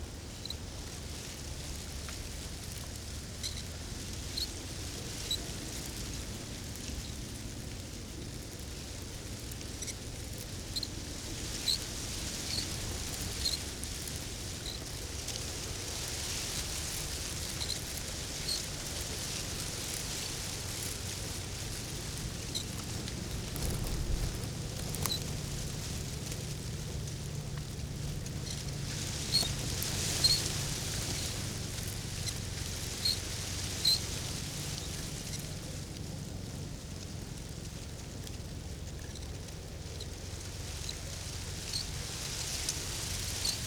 Lithuania, Utena, last years's reed in water - last years's reed in water
sounds in the grass, near water